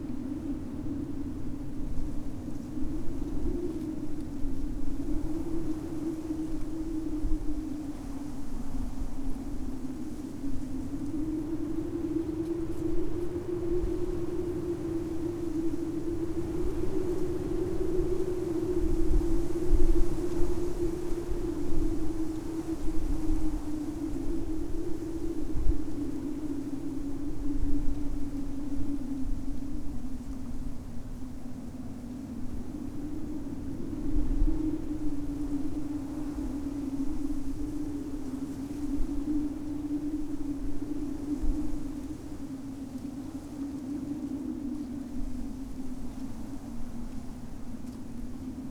droning, humming electro wires in the spring's wind
Lithuania, 28 March 2012, ~14:00